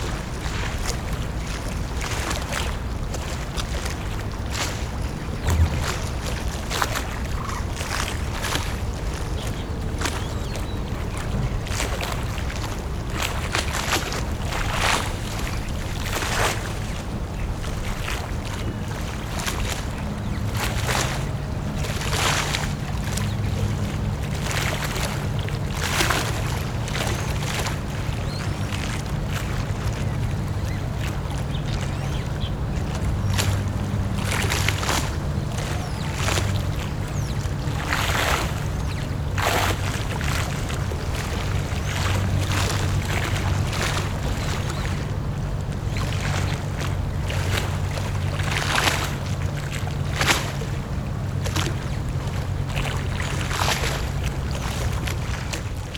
wugu, New Taipei City - The sound of the waves